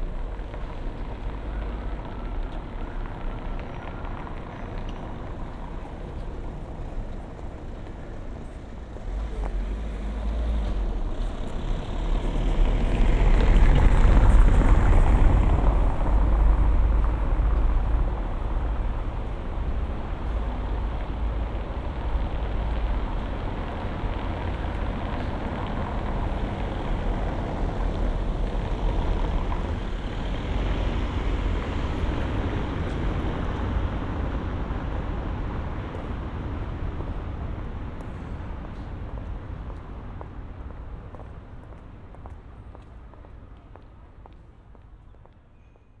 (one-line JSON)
{"title": "Berlin: Vermessungspunkt Friedel- / Pflügerstraße - Klangvermessung Kreuzkölln ::: 18.07.2012 ::: 22:52", "date": "2012-07-18 22:52:00", "latitude": "52.49", "longitude": "13.43", "altitude": "40", "timezone": "Europe/Berlin"}